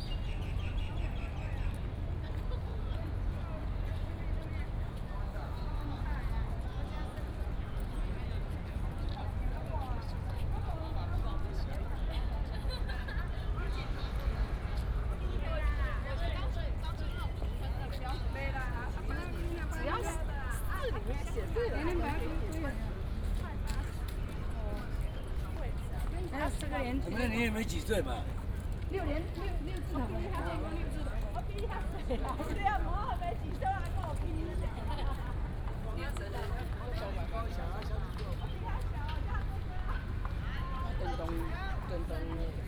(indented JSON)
{
  "title": "本東倉庫, Yancheng Dist., Kaohsiung City - Light rail tram running",
  "date": "2018-03-30 17:05:00",
  "description": "Circular Line (KLRT), Traffic sound, birds sound, Tourists, light rail transit, Light rail tram running\nBinaural recordings, Sony PCM D100+ Soundman OKM II",
  "latitude": "22.62",
  "longitude": "120.28",
  "altitude": "4",
  "timezone": "Asia/Taipei"
}